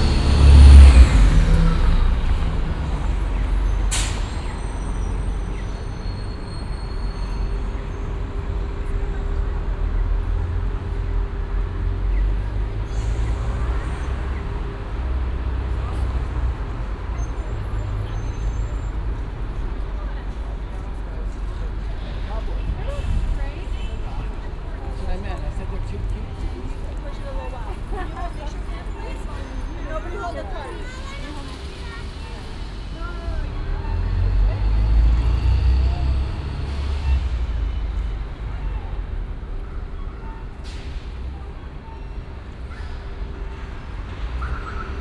sounding traffic lights at busy street downtown in the early afternoon
soundmap international
social ambiences/ listen to the people - in & outdoor nearfield recordings